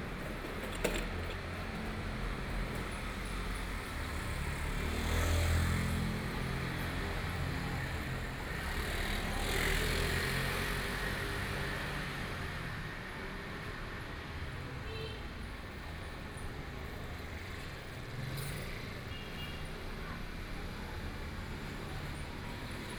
East Beijing Road, Shanghai - Narrow channel
Traffic Sound, Old small streets, Narrow channel, Binaural recordings, Zoom H6+ Soundman OKM II
Shanghai, China, 2 December 2013